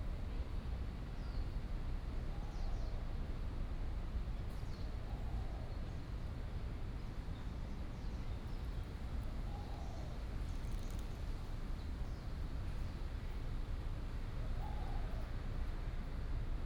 民輝公園, Da’an Dist., Taipei City - Bird calls
in the Park, Bird calls, Traffic Sound